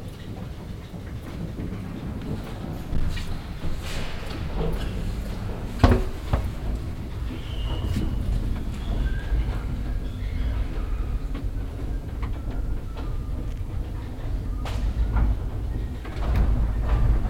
stuttgart, rathaus, paternoster
inside the old paternoster elevator at stuttgart rathaus
soundmap d - social ambiences and topographic field recordings
19 June 2010, 18:46